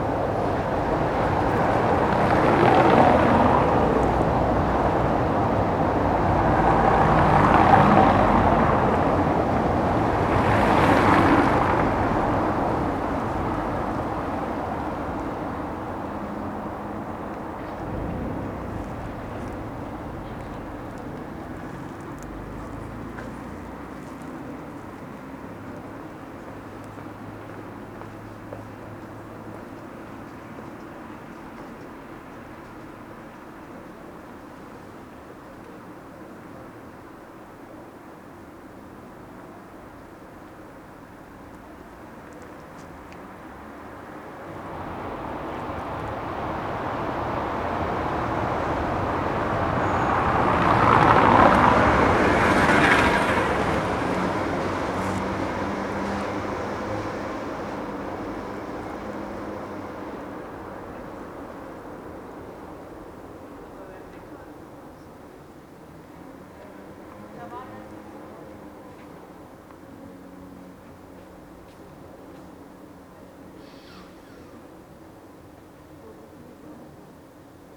berlin: friedelstraße - the city, the country & me: night traffic
cyclists, passers by, taxis
the city, the country & me: october 27, 2012